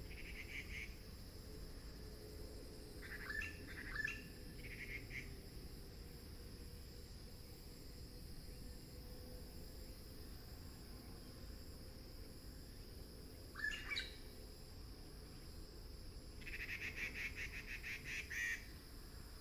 sound of birds, traffic sound, sound of the plane, Binaural recordings, Sony PCM D100+ Soundman OKM II